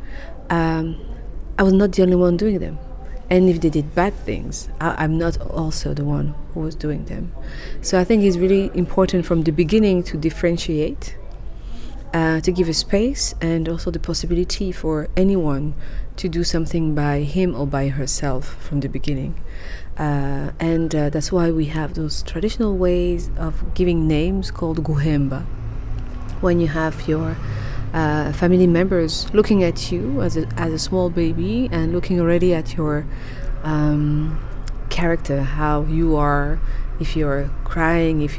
City Library, Hamm, Germany - My real name is Umulinga…
We are with the actor Carole Karemera from Kigali, Rwanda was recorded in Germany, in the city library of Hamm, the Heinrich-von-Kleist-Forum. Carole and her team of actors from the Ishyo Art Centre had come to town for a week as guests of the Helios Children Theatre and the “hellwach” (bright-awake) 6th International Theatre Festival for young audiences. Here Carole begins to tell her story… my real name is Umulinga…
16 June